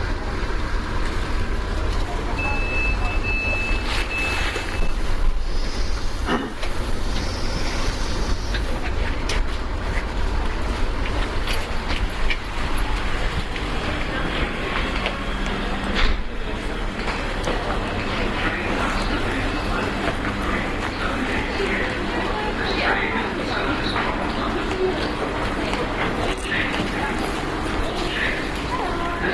getting off train and leaving Weymouth station